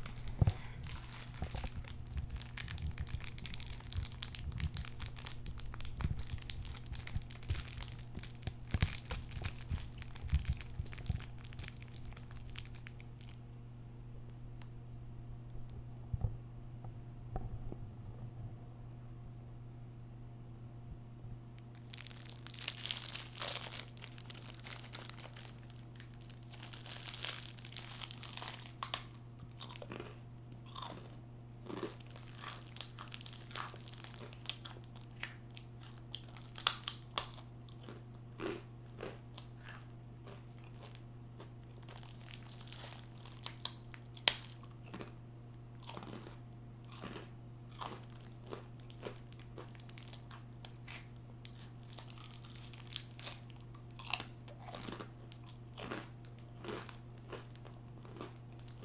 University of Colorado Boulder, Regent Drive, Boulder, CO, USA - Newton Court